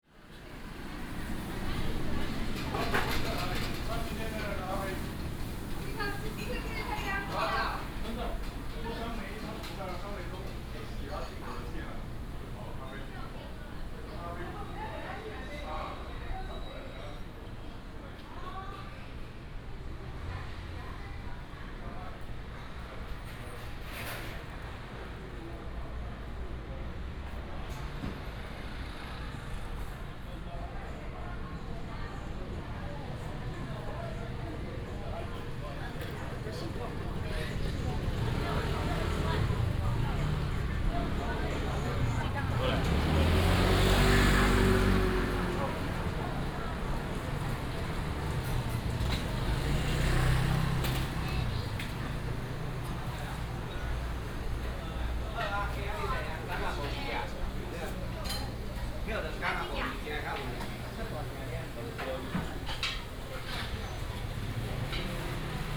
西安街, East Dist., Hsinchu City - Walk through the market
Walk through the market, Traffic sound